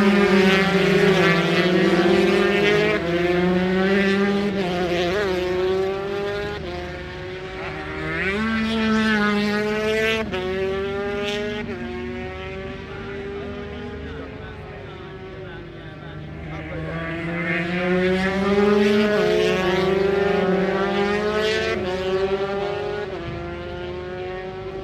Unit 3 Within Snetterton Circuit, W Harling Rd, Norwich, United Kingdom - British Superbikes 2005 ... 125 qualifying ...
british superbikes ... 125 qualifying ... one point stereo mic to minidisk ... time approx ...